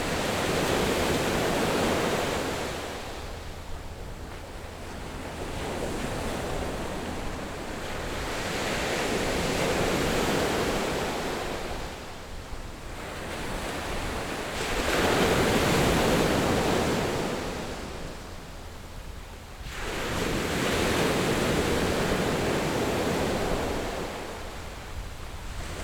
新城鄉順安村, Hualien County - sound of the waves
Sound of the waves, The weather is very hot
Zoom H6 MS+Rode NT4